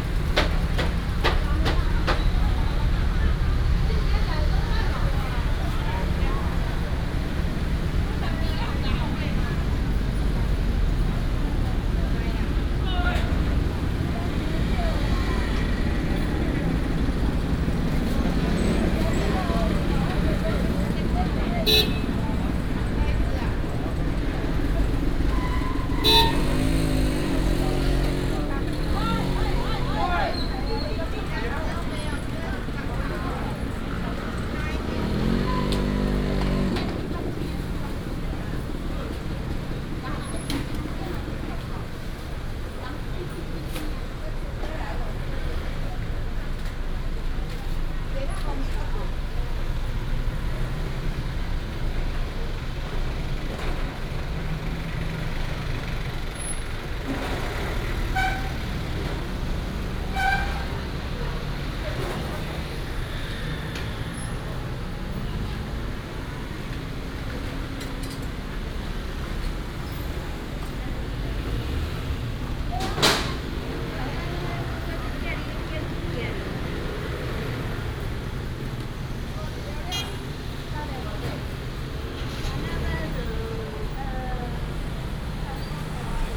Walking in the traditional market, vendors peddling, traffic sound, Binaural recordings, Sony PCM D100+ Soundman OKM II
Taichung City, Taiwan